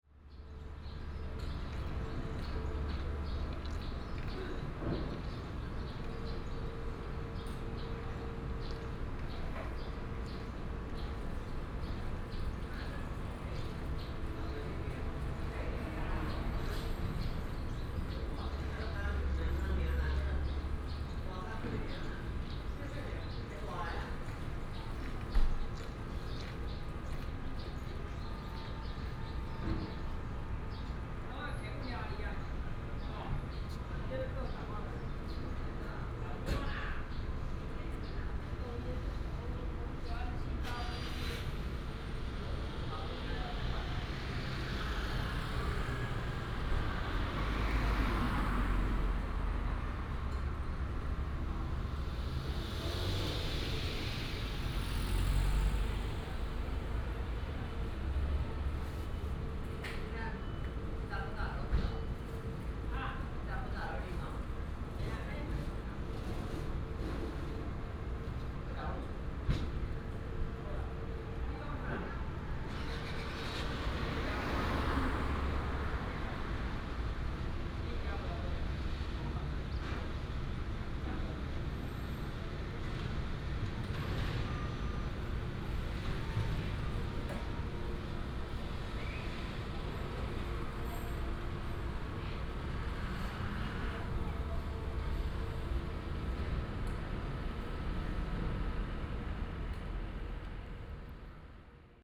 Changqing St., Xihu Township - Rest in the market
Rest in the market, Traffic sound, sound of the birds